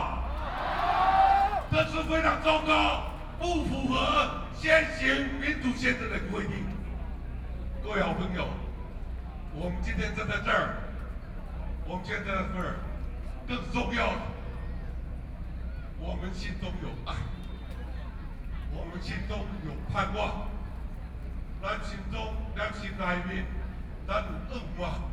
{"title": "Ketagalan Boulevard, Zhongzheng District, Taipei City - speech", "date": "2013-08-18 21:06:00", "description": "Excitement and enthusiasm speech, Against the Government, Sony PCM D50 + Soundman OKM II", "latitude": "25.04", "longitude": "121.52", "altitude": "8", "timezone": "Asia/Taipei"}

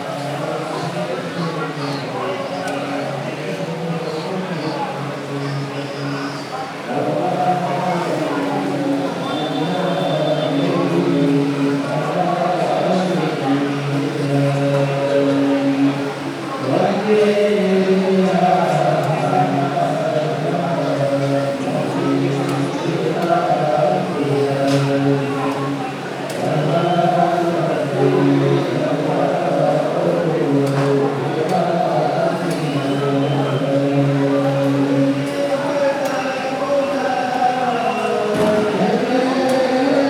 {"title": "Touba, Senegal - On Illa Touba - Grand Magal Prayer 2019", "date": "2019-10-17 13:20:00", "description": "Prayer/song during the Grand Magal of Touba in October 2019. Recorded in a home on Illa Touba, which was opened to the community for prayer and celebration.", "latitude": "14.85", "longitude": "-15.88", "altitude": "49", "timezone": "Africa/Dakar"}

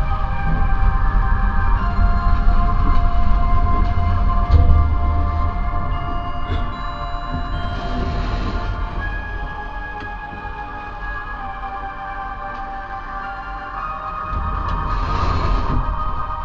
{"title": "The sad Elevator Friday 13th in Madrid", "latitude": "40.42", "longitude": "-3.70", "altitude": "684", "timezone": "GMT+1"}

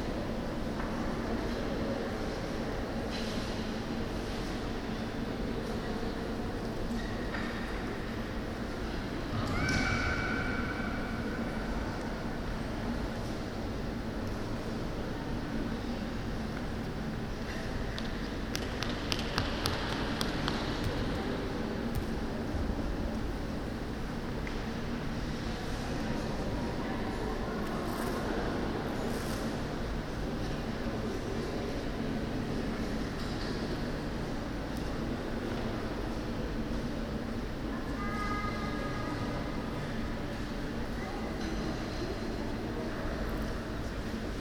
{"title": "Lille-Centre, Lille, Frankrijk - Central Hall, Palais Des Beaux - Arts", "date": "2016-08-12 13:04:00", "description": "The deafening reverb of the main hall in the Museum of Fine Arts in Lille, France.\nIt is one of the largest art museums in France and definitely worth a visit. The main source of this noise in this recording is the museum restaurant, located in the hall.\nBinaural Recording", "latitude": "50.63", "longitude": "3.06", "altitude": "29", "timezone": "Europe/Paris"}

